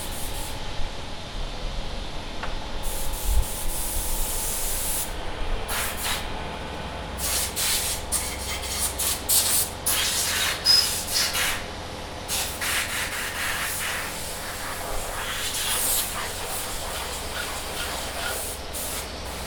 Lodz, Kilinskiego, EC1, Łódź Fabryczna
17 November 2011